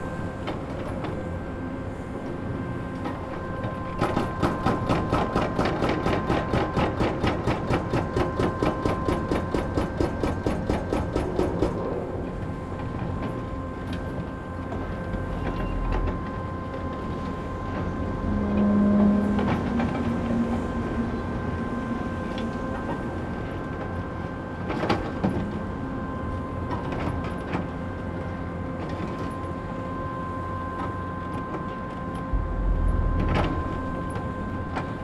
berlin, sonnenallee: aufgegebenes fimengelände - A100 - bauabschnitt 16 / federal motorway 100 - construction section 16: demolition of a logistics company
excavator with mounted jackhammer demolishes building elements, echo of the jackhammer, distant drone of a fog cannon, noise of different excavators
february 18, 2014